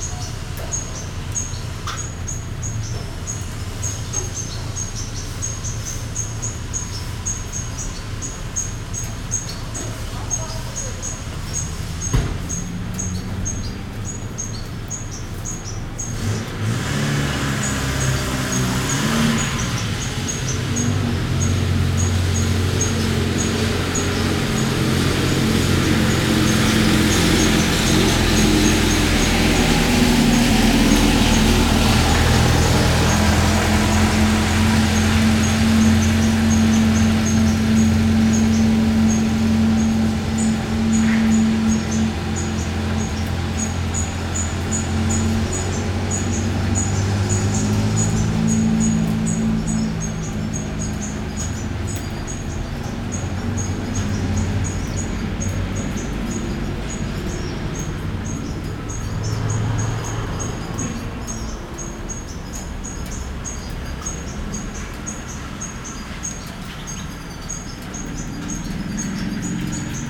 Amasia, Arménie - The small Amasia city soundscape
Sound of the center of Amasia, during a 20 minutes lunch break. Bird singing, old cars passing, some people at work.
Armenia, 12 September